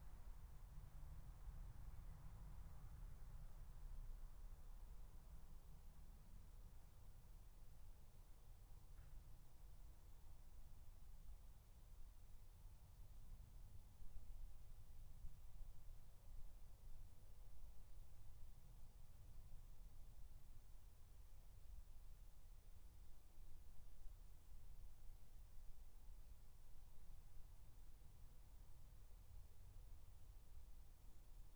Dorridge, West Midlands, UK - Garden 12
3 minute recording of my back garden recorded on a Yamaha Pocketrak